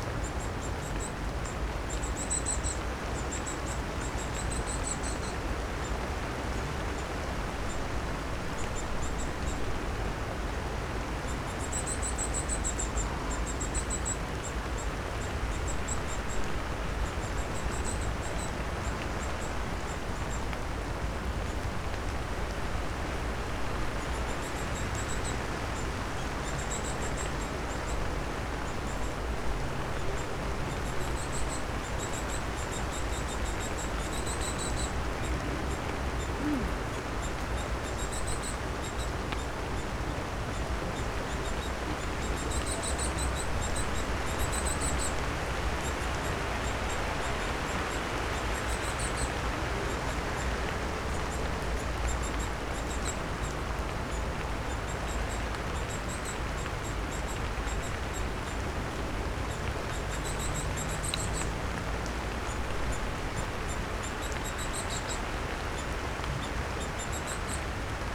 Utena, Lithuania, it's raining under the lime-tree
standing under the lime-tree and listening summer rain